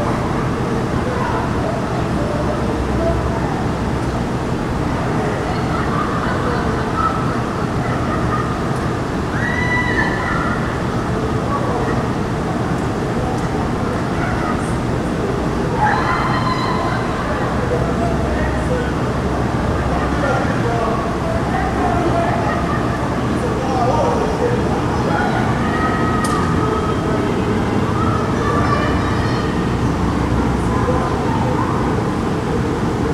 Clara Barton rooftop DC
Rooftop party and helicopter flyover in Penn Quarter, downtown DC.
DC, USA, 19 September